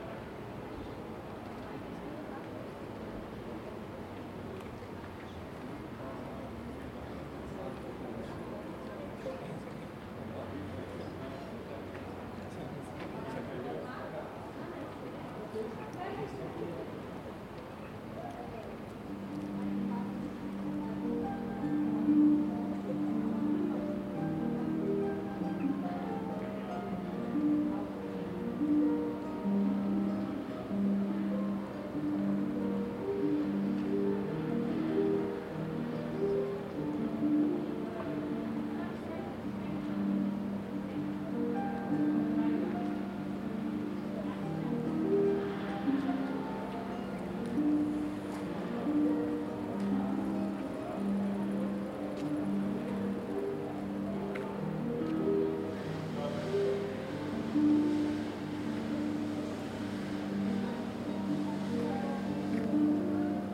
Domplatz Salzburg. Streetmusician Harp. People talking.
Österreich, 23 February